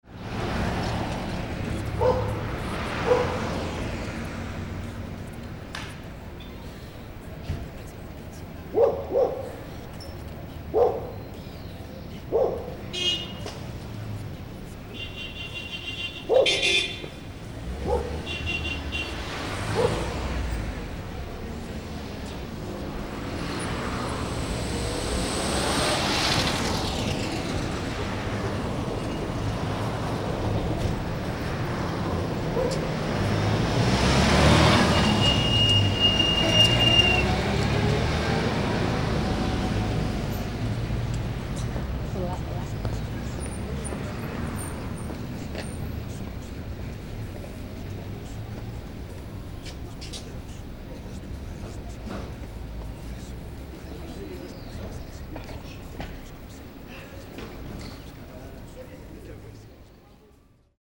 El Prado - Trafico cercano
Registrado por: Bernarda Villagomez